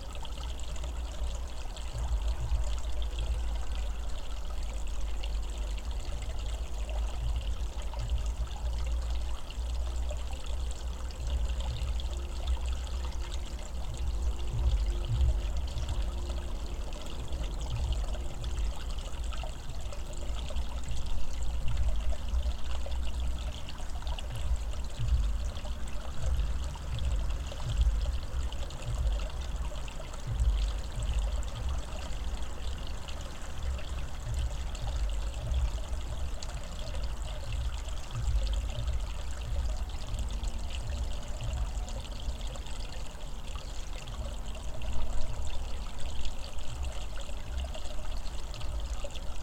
{"title": "Raguvėlė, Lithuania, on the hanging bridge", "date": "2020-11-21 15:15:00", "description": "From the hanging bridge...some additional low frequencies captured by geophone placed on constructions of the bridge", "latitude": "55.65", "longitude": "24.67", "altitude": "71", "timezone": "Europe/Vilnius"}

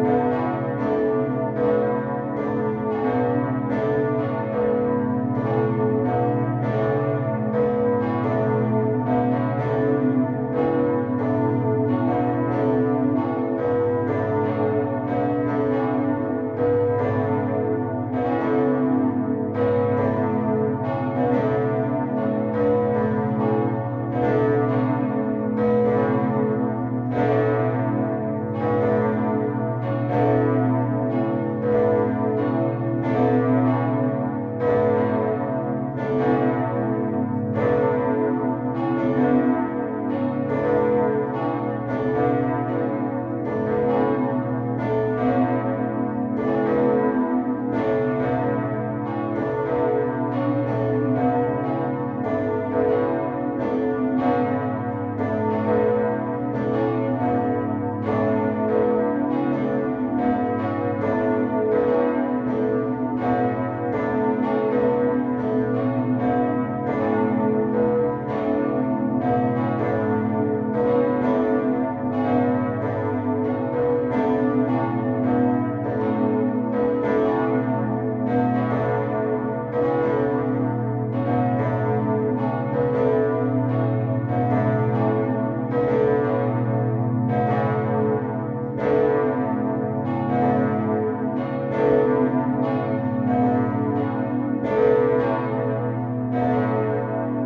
{"title": "Bruges, Belgique - Brugge bells", "date": "2013-10-26 13:10:00", "description": "The Brugge bells in the Sint-Salvatorskathedraal. Recorded inside the tower with Tim Martens and Thierry Pauwels.", "latitude": "51.21", "longitude": "3.22", "altitude": "12", "timezone": "Europe/Brussels"}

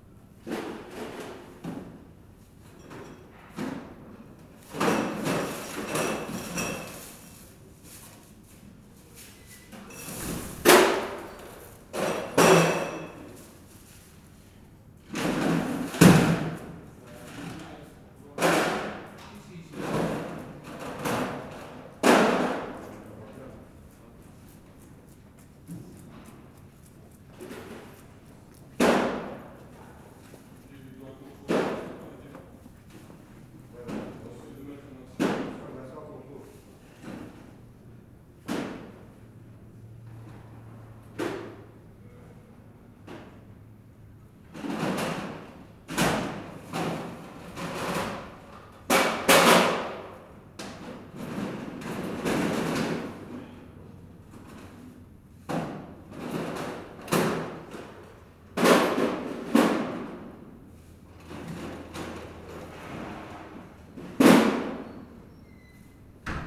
{"title": "Centre, Ottignies-Louvain-la-Neuve, Belgique - Delivery men", "date": "2016-03-18 07:40:00", "description": "Delivery men unload beers and beers and beers...", "latitude": "50.67", "longitude": "4.61", "altitude": "118", "timezone": "Europe/Brussels"}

